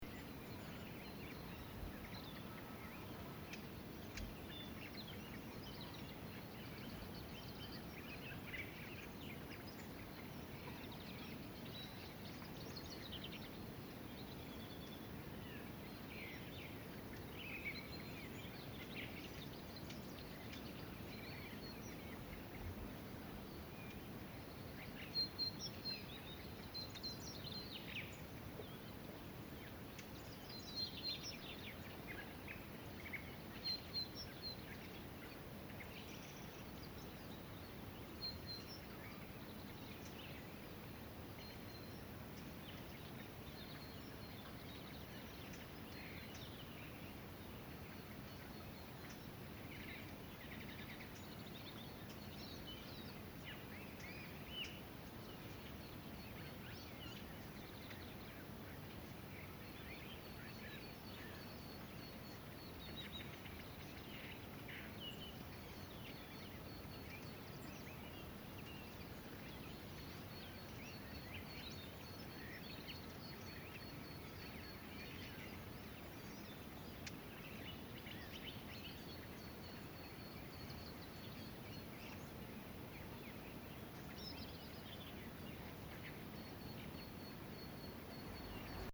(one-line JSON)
{"date": "2014-02-19 10:00:00", "description": "Lagoaça, Freixo de Espada À Cinta, Portugal Mapa Sonoro do Rio Douro. Douro River Sound Map", "latitude": "41.19", "longitude": "-6.70", "altitude": "196", "timezone": "Europe/Lisbon"}